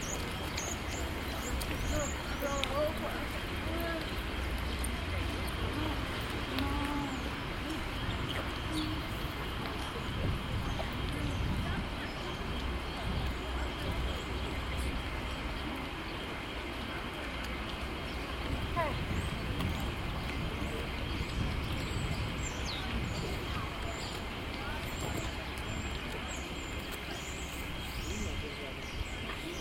Parco Del Colle Oppio, Viale della Domus Aurea, Rom, Italien - domus aurea
Bells, birds, preparation of a distance event
(olympus ls5, soundman okmII classic)
Rome, Italy, 3 November 2013